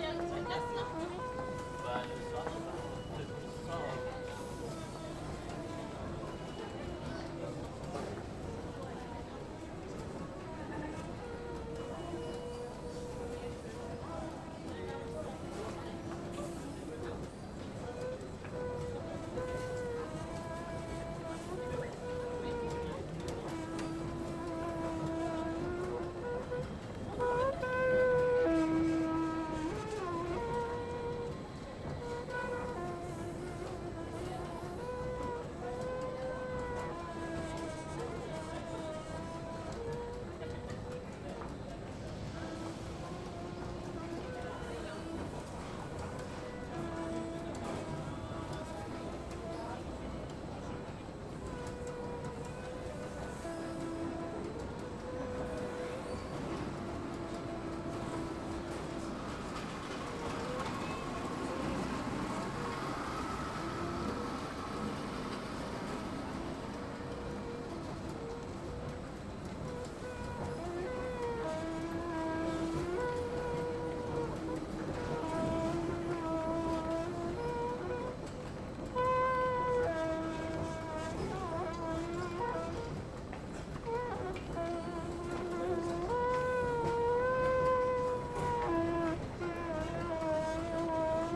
Les Halles, Paris, France - la litanie d'un escalator
il crie et tout le monde s'en fout